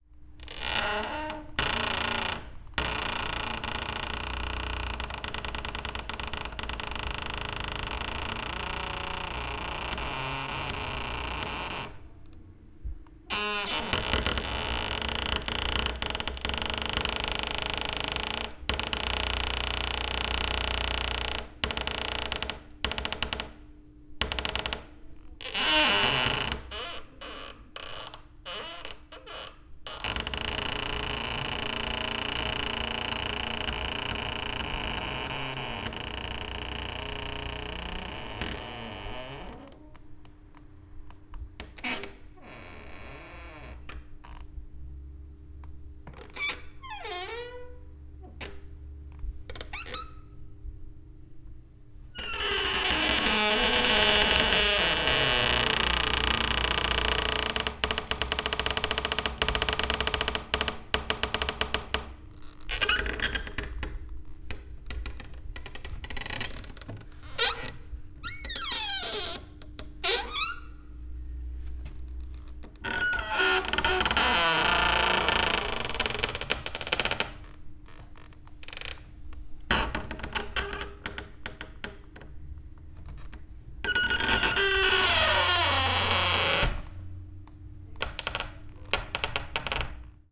Poznan, Jerzyce district, office - door solo #2
door to the bathroom in my office has the right notes in its hinges. couldn't resist myself to this crisp instrument and ripped a solo quickly